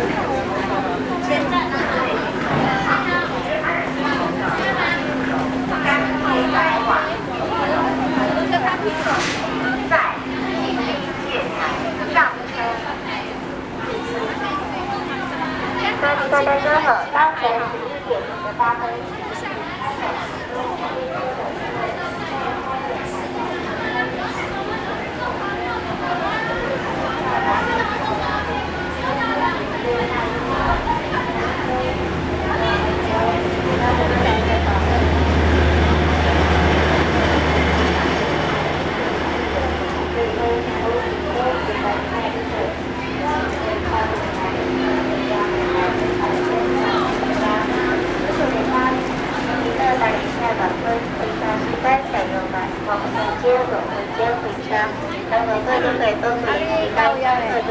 Kaohsiung Station, Taiwan - Railway platforms